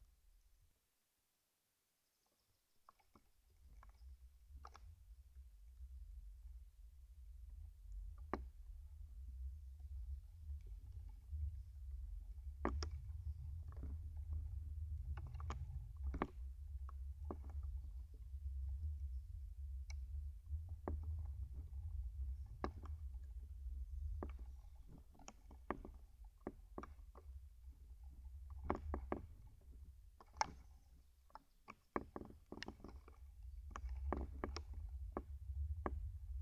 Lithuania, Narkunai, dried wisp in wind
recorded with contact microphone
24 April 2012